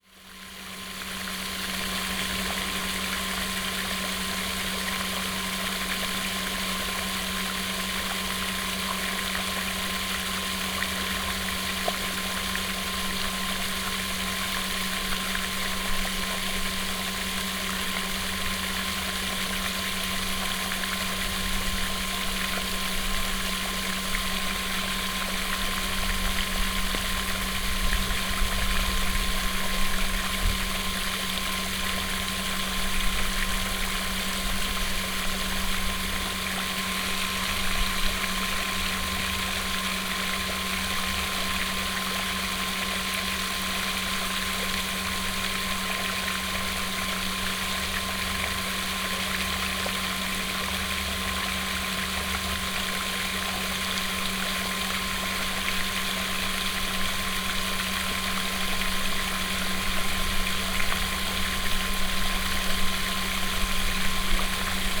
at the pond in a small village of Zlotniki. An artificial pond with a fountain in the center. sound of the splashing water and operating pump. plane flies by. (roland r-07)